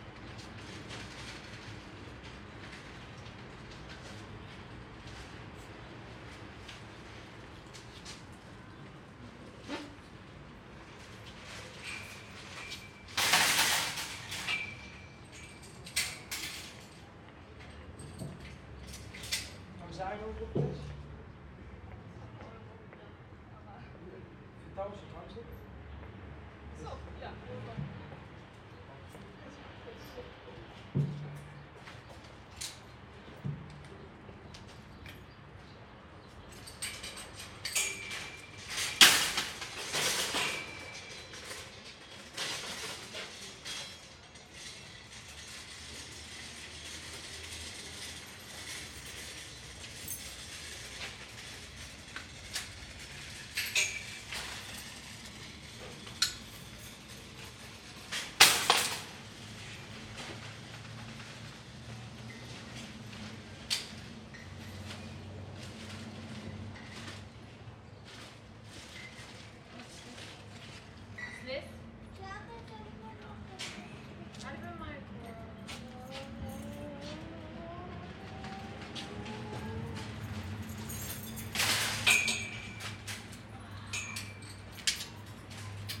Wollankstraße, Berlin - in front of a supermarket, shopping trolleys, customers. The supermarket is attracting many inhabitants of Soldiner Kiez. Sometimes they stop for a chat.
[I used the Hi-MD-recorder Sony MZ-NH900 with external microphone Beyerdynamic MCE 82]
Wollankstraße, Berlin - Vor dem Supermarkt, Einkaufswägen, Kunden. Der Supermarkt zieht viele Einwohner aus der Umgegend an. Manchmal ist sogar Zeit für einen kurzen Schwatz.
[Aufgenommen mit Hi-MD-recorder Sony MZ-NH900 und externem Mikrophon Beyerdynamic MCE 82]
Berlin, Germany, 13 October 2012